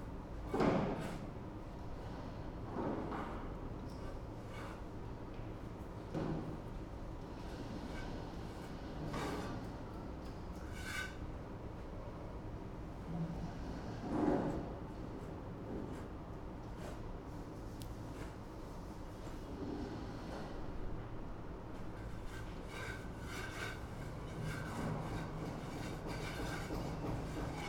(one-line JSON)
{"title": "porto, r. de passos manuel - maus habitos, roof", "date": "2010-10-11 17:45:00", "description": "maus habitos, location of the futureplaces festival, roof terrace, preparations, ventilation", "latitude": "41.15", "longitude": "-8.61", "altitude": "100", "timezone": "Europe/Lisbon"}